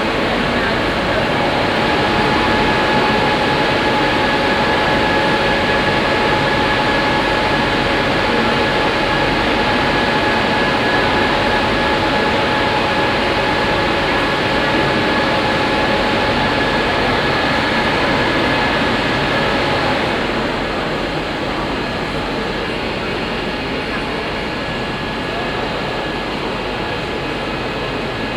{"title": "Neuss, Deutschland - museums island hombroich, langen founation, exhibtion hall", "date": "2014-08-09 14:00:00", "description": "Inside the Langen Foundation exhibition hall during the Otto Piene Exhibition \"Light and Air\" - here the ambience from the lower hall with the sound of the in and deflating air sculptures of Otto Piene.\nsoundmap d - social ambiences, topographic field recordings and art spaces", "latitude": "51.15", "longitude": "6.64", "altitude": "67", "timezone": "Europe/Berlin"}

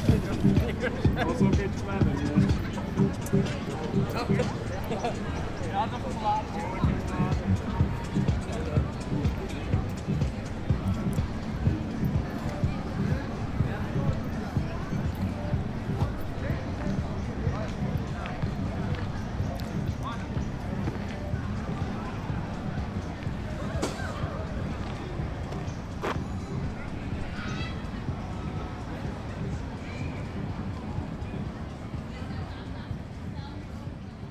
{"title": "Vondelpark, saturday picknicking", "date": "2011-10-01 17:15:00", "description": "last warm summer days, vondelpark is packed with people, group of junkies making music.", "latitude": "52.36", "longitude": "4.87", "altitude": "5", "timezone": "Europe/Amsterdam"}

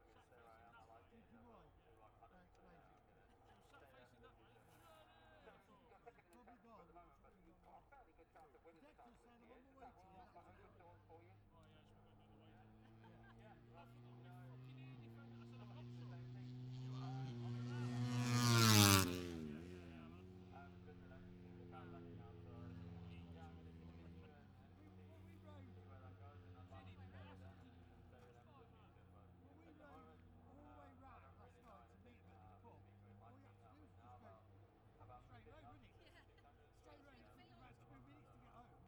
{"title": "Silverstone Circuit, Towcester, UK - british motorcycle grand prix 2021 ... moto three ...", "date": "2021-08-27 13:15:00", "description": "moto three free practice two ... maggotts ... dpa 4060s to Zoom H5 ...", "latitude": "52.07", "longitude": "-1.01", "altitude": "158", "timezone": "Europe/London"}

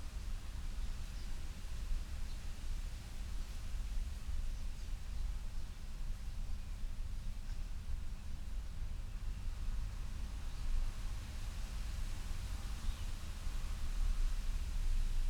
{"title": "Main St, North Sunderland, Seahouses, UK - st pauls porch ...", "date": "2021-11-17 16:00:00", "description": "st pauls parish church of north sunderland and seahouses ... inside the porch ... dpa 4060s clipped to bag to zoom h5 ...", "latitude": "55.58", "longitude": "-1.67", "altitude": "21", "timezone": "Europe/London"}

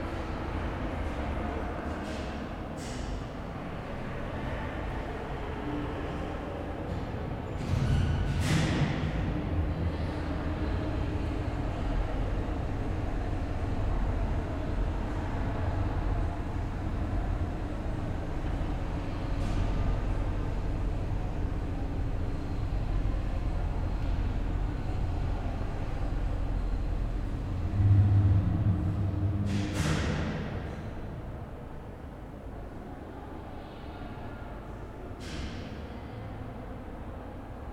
{"title": "ITÜ Architechture bldg survey, Stairwell", "date": "2010-03-06 23:52:00", "description": "sonic survey of 18 spaces in the Istanbul Technical University Architecture Faculty", "latitude": "41.04", "longitude": "28.99", "altitude": "74", "timezone": "Europe/Tallinn"}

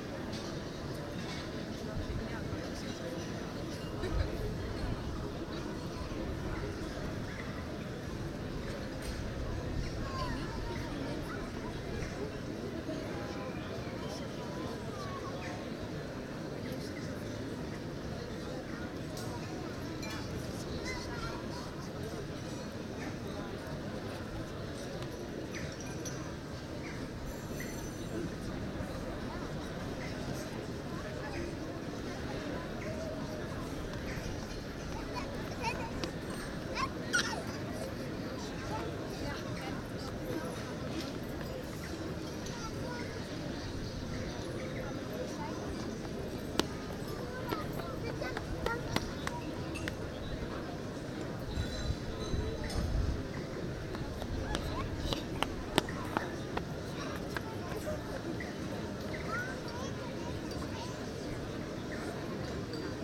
{"title": "CS, Plein, Den Haag, Nederland - William of Oranges pedestal", "date": "2017-06-04 14:30:00", "description": "William Of Orange's Pedestal (Plein, The Hague, June 4th 2016) - Recorded while sitting on the ledge of the pedestal of William Of Orange's statue on the Plein, The Hague. Binaural recording (Zoom H2 with Sound Professionals SP-TFB-2 binaural microphones).", "latitude": "52.08", "longitude": "4.32", "altitude": "9", "timezone": "Europe/Amsterdam"}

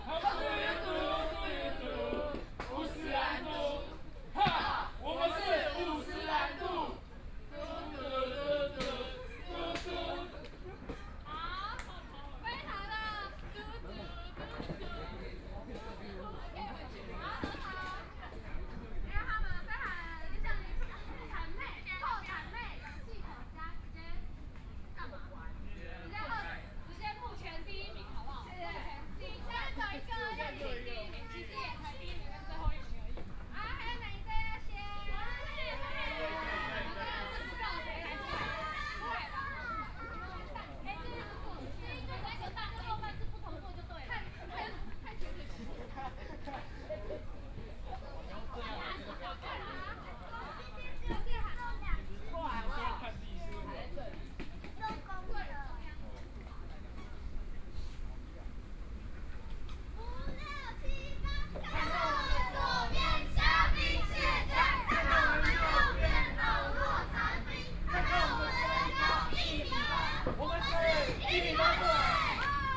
{"title": "羅東林業文化園區, Luodong Township - Under the tree", "date": "2014-07-28 10:11:00", "description": "in the Park, Birdsong sound, Tourist", "latitude": "24.68", "longitude": "121.77", "altitude": "9", "timezone": "Asia/Taipei"}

